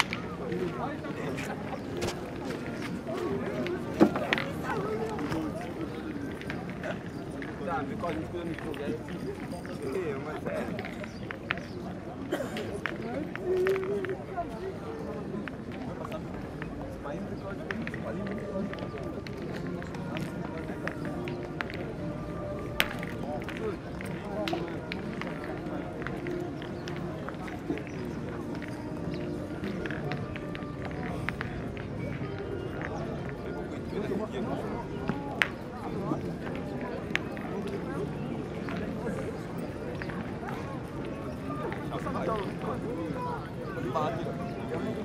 Saint denis de la reunion
Défilé 14 Juillet Terrain de pétanque Musique par intermittence-Voix joueurs-Spectateurs
24 July, 15:12